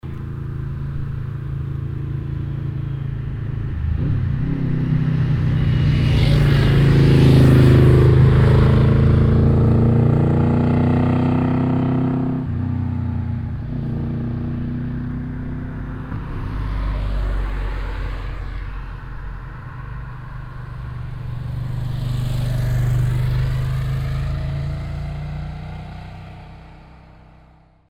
stolzembourg, rue principale, motorbike
A motorbike passing by on the road to Vianden.
Stolzemburg, Hauptstraße, Motorrad
Ein Motorrad fährt auf der Straße nach Vianden vorüber.
Stolzemborg, rue principale, motocycles
Une moto roulant sur la route en direction de Vianden.
Project - Klangraum Our - topographic field recordings, sound objects and social ambiences
Putscheid, Luxembourg, 9 August, ~23:00